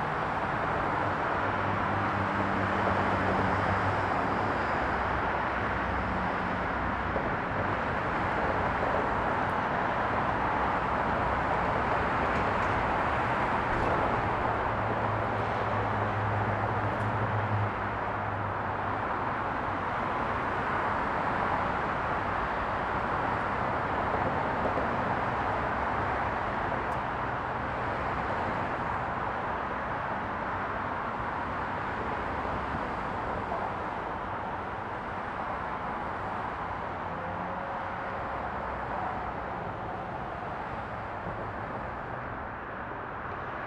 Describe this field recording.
Thursday Night 8:35pm at Fitzgerald's garage, 6th floor, very quiet inside the building, the adjacent highway and train station produced vast amount of sound. Using a TASCAM-DR40.